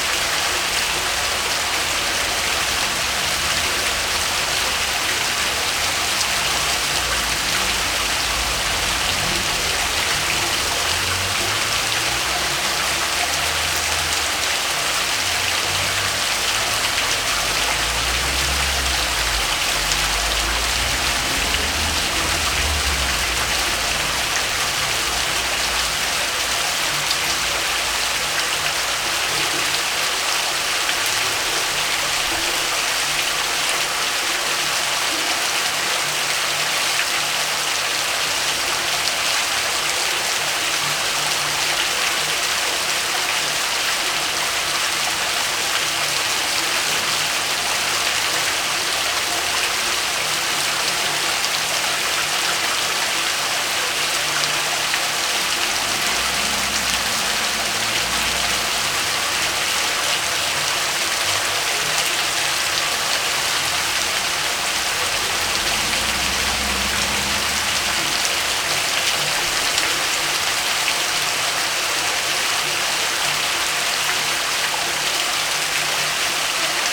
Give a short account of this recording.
Fontaine circulaire à jet ascendant, place de Coëtquen à Rennes (35000) France. Réalisée par Claudio Parmiggiani en 1992. Une Fontaine. Limite des flammes devastatrices de lincendie de 1720, la place de Coëtquen encadre la fontaine doù surgit leau pour redonner la vie. Une base circulaire, un fût couronné dun anneau, la fontaine apparait tel un puit de granit bleu. Une tête de muse endormit, taillée en marbre blanc, repose à fleur deau en son centre.